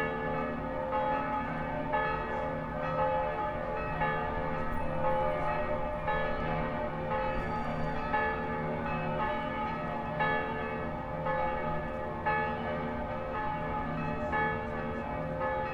Michalská, Bratislava, Slovakia - Church Bells in Bratislava From the Top of Michaels Tower
Recording of church bells of all churches in Bratislava center city ringing at the same time. This was a special occation on the day of state funeral of Slovakia's president Michal Kováč. Recorded from the top of Michael's Tower.
Bratislavský kraj, Slovensko